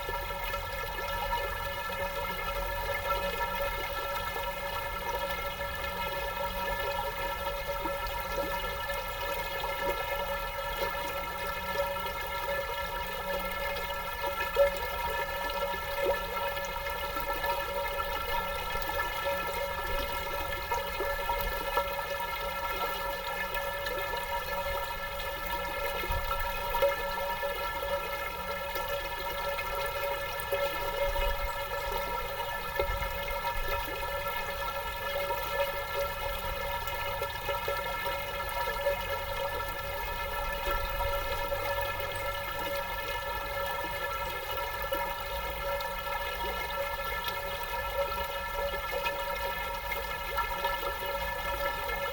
Utena, Lithuania, pipe in the river
Some metallic pipe in the river. Testing new Instamic ProPlus mini recorder placed just inside the pipe.
23 October, 17:10